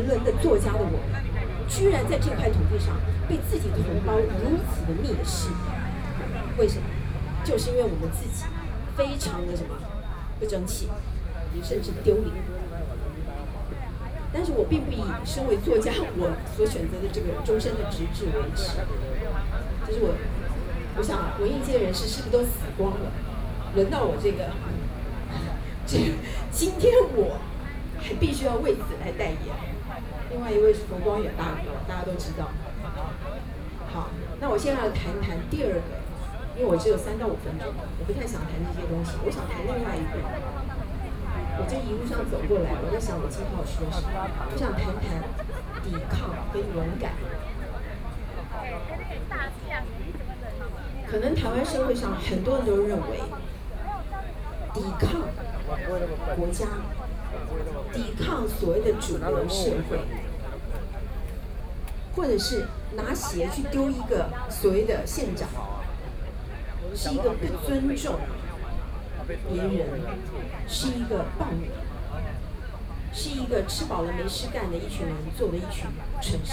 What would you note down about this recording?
Speech, writers are protesting government, Binaural recordings, Sony PCM D50+ Soundman OKM II